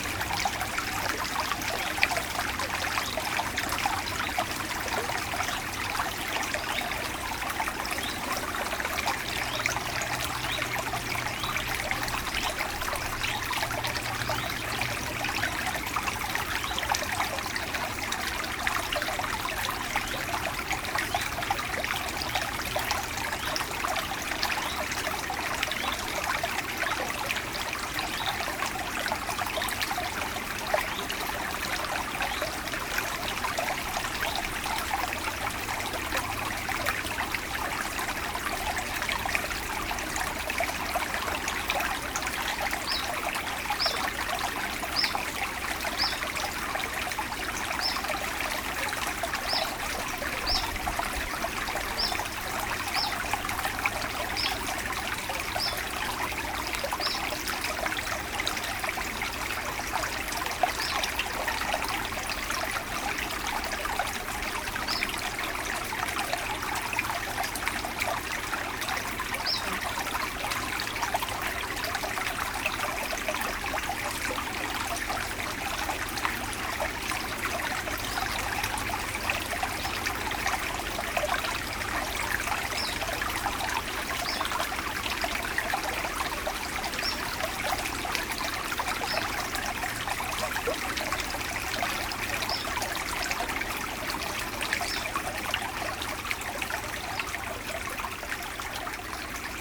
Chaumont-Gistoux, Belgique - The Train river
The Train river, a small stream inside the woods, and sometimes, a distant dog barking.
Chaumont-Gistoux, Belgium, 15 August